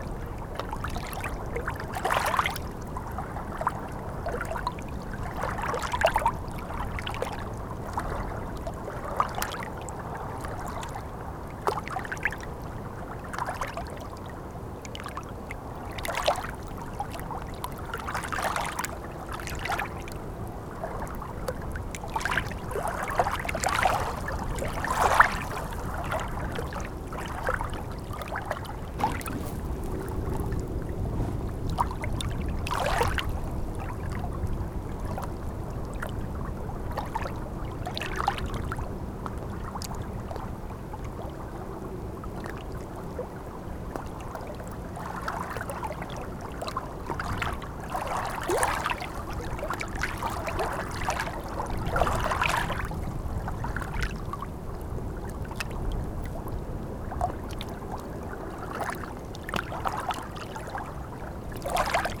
La Mailleraye-sur-Seine, France - High tide
Sounds of the Seine river, during the high tide. Water is flowing in the wrong way (going to Paris).